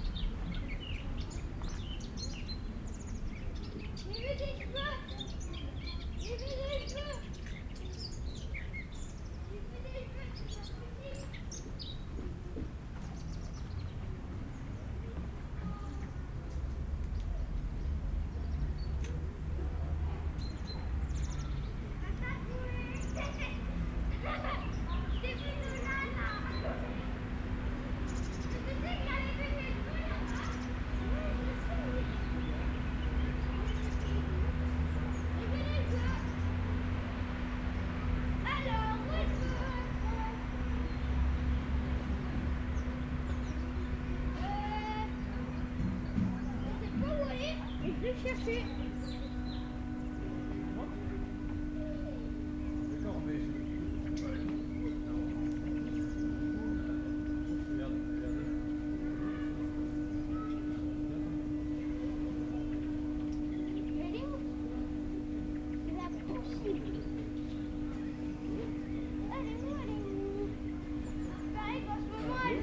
Dans les arbres du Jardin des Plantes, une fauvette chante, les enfants jouent à cache-cache.